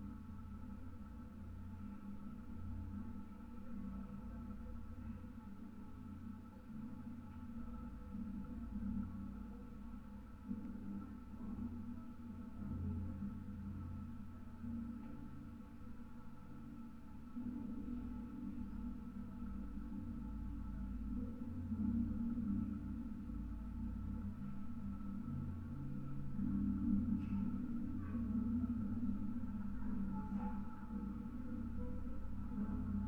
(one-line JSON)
{"title": "Anyksciai, Lithuania, treetop walking path", "date": "2015-10-17 11:30:00", "description": "massive iron support towers of the treetop walking path. contact microphone recording.", "latitude": "55.49", "longitude": "25.06", "altitude": "86", "timezone": "Europe/Vilnius"}